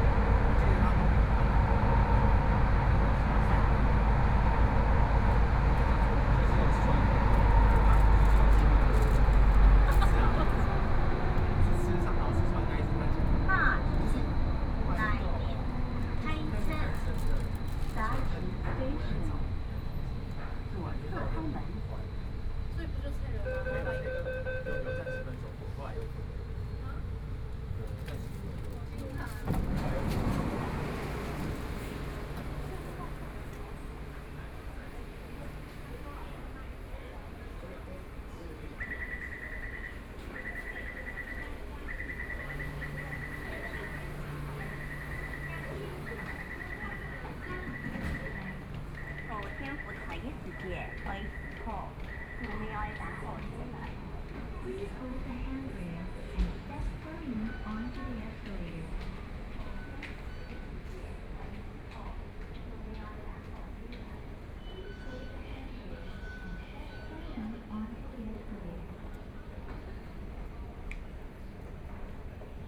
內湖區, Taipei City - Neihu Line (Taipei Metro)
from Gangqian Station to Dazhi Station
Binaural recordings